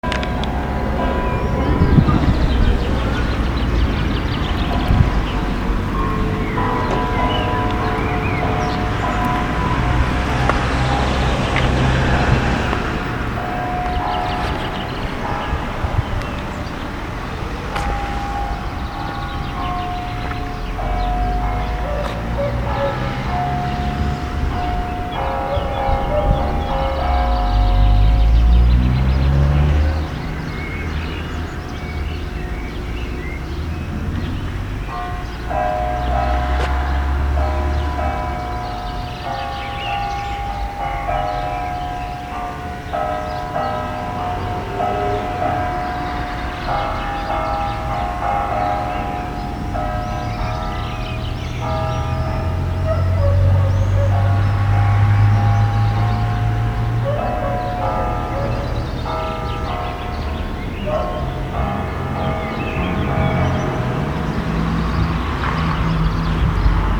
via Marzorati, Nerviano (Milan), campane, traffico e uccelli dietro al municipio
Campane, traffico, uccelli nel parco dietro al Municipio